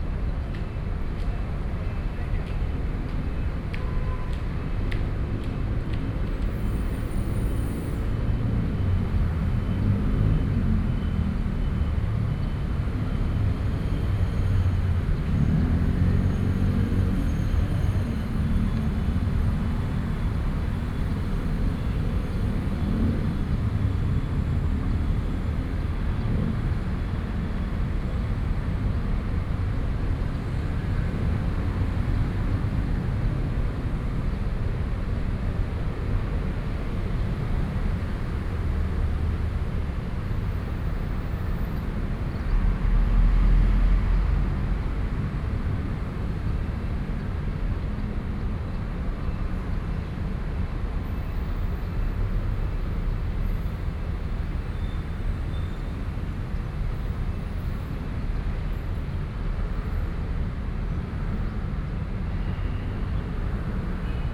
East-Gate, Hsinchu City - Traffic Noise
Traffic Noise, Sony PCM D50 + Soundman OKM II
Dong District, 東門圓環